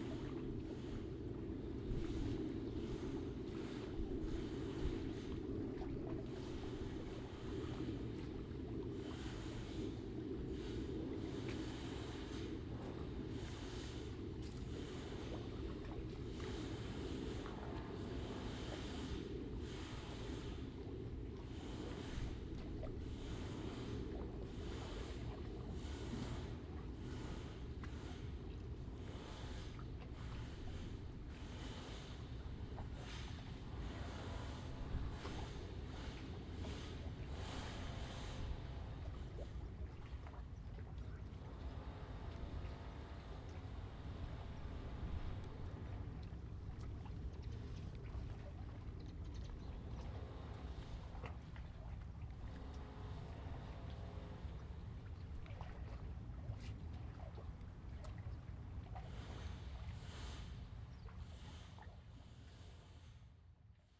沙港遊樂碼頭, Huxi Township - In the dock
In the dock, Waves and tides, Construction noise
Zoom H2n MS+XY